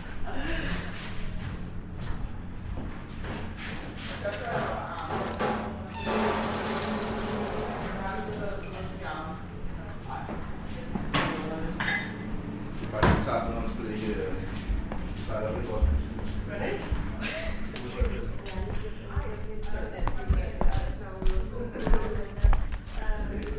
{"title": "Humlebæk, Danmark - Krogerup", "date": "2014-03-05 11:38:00", "description": "people chattering in the dinning hall\nsounds of Krogerup Højskole", "latitude": "55.97", "longitude": "12.53", "timezone": "Europe/Copenhagen"}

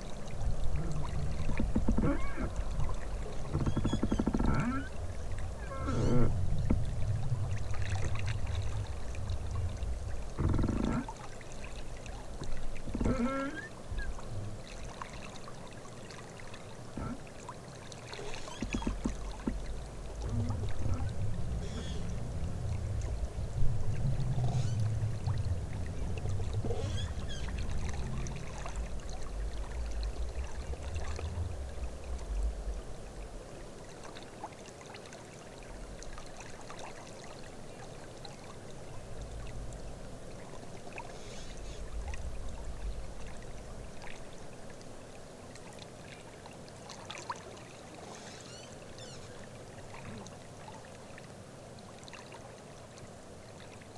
{"title": "Šventupys, Lithuania, soundscape with singing tree", "date": "2020-12-27 14:50:00", "description": "river soundscape with \"singing\" pine tree recorded with contact mics", "latitude": "55.61", "longitude": "25.46", "altitude": "88", "timezone": "Europe/Vilnius"}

